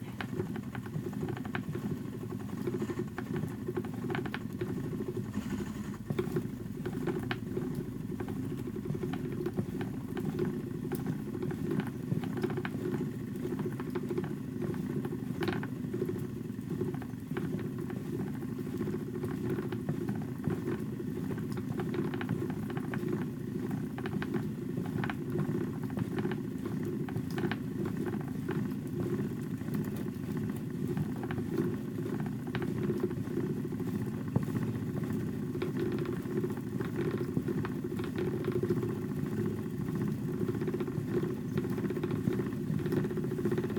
This is the sound of Elizabeth Johnston - AKA Shetland Handspun - spinning Shetland wool on an old Shetland wheel. Elizabeth Johnston produces outstanding handspun wool which she dyes with natural dyes such as madder and indigo. Elizabeth gets the best fleeces that she can through the Shetland Woolbrokers; once she has a few really nice fleeces, she hand spins and then dyes them. This is because it causes less damage to the wool fibres if they are spun before being dyed, rather than the other way around. As well as being an amazing spinner and dyer, Elizabeth is a talented knitter. I loved the afternoon that I spent with her, listening to her spinning wheels, talking about the rhythm and whirr of wheels, and looking through all the gorgeous hanks of handspun she had in her studio. In this recording, she is spinning wool and I am moving my microphones around the different parts of the wheel.
Shetland Islands, UK